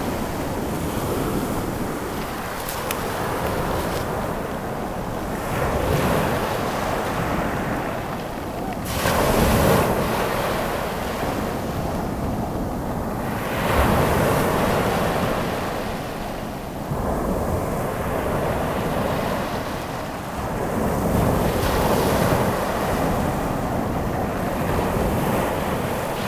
albenga, seaside, surf
the surf at albenga's stony beach in the evening time
soundmap international: social ambiences/ listen to the people in & outdoor topographic field recordings
25 July